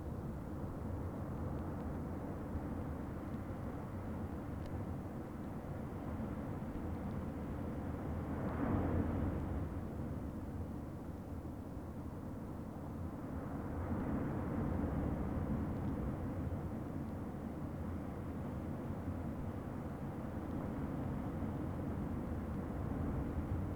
Gáldar, Gran Canarai, hydrophones in the sand
hydrophones burried in the sand of ocean's shore